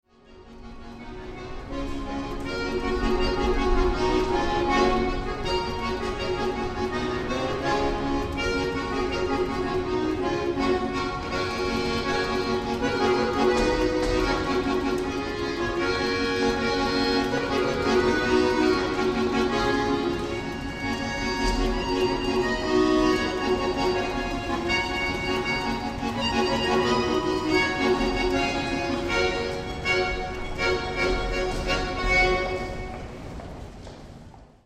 berlin: u-bahnhof schönleinstraße - Akkordeonspieler in der Station
Akkordeonspieler am Eingang der U8.
Zoom H2
24 January 2008, ~19:00